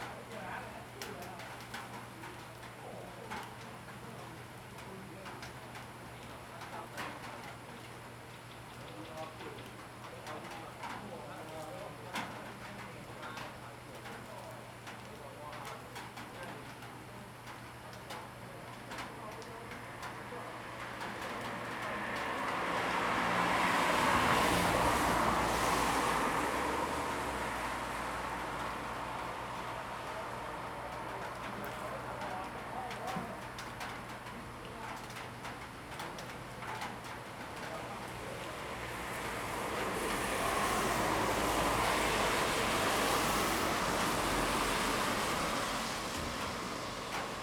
月眉里, Guanshan Township - Rain and Traffic Sound
Rain and Traffic Sound, In the roadside temple
Zoom H2n MS +XY